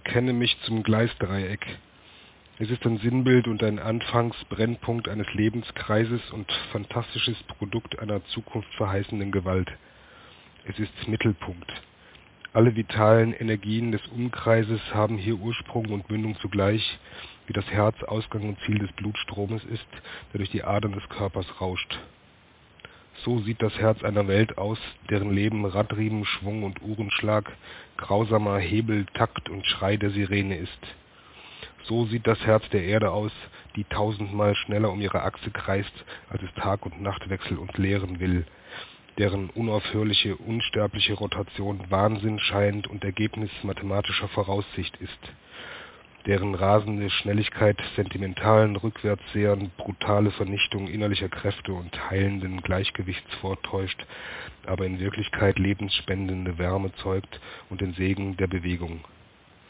Gleisdreieck 1924 - Gleisdreieck 1924 - Joseph Roth
aus: Bekenntnis zum Gleisdreieck, 1924, Orte, Joseph Roth
28.03.2007 20:01